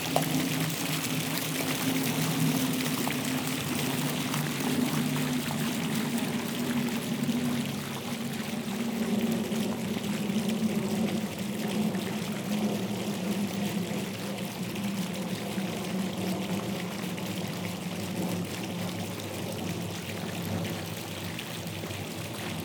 The Jef Denyn fountains. The stream is completely aleatory. At the beginning, a worried moorhen.